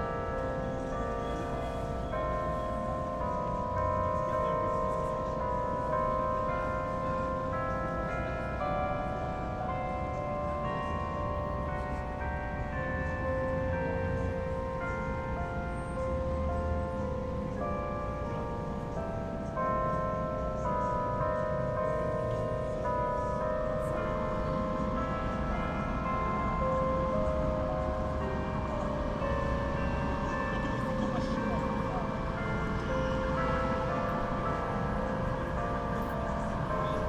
Downtown, Montreal, QC, Canada - WLD 2014 - Square Dorchester - downtown Montreal
Recording from Square Dorchester, downtown Montreal. Everyday at 5:00 pm. We can ear a recording of Big Ben's carillon and music coming from the Sun life building located in front of the square. It was very windy that day and there are all ways a lot of traffics and peoples around the place.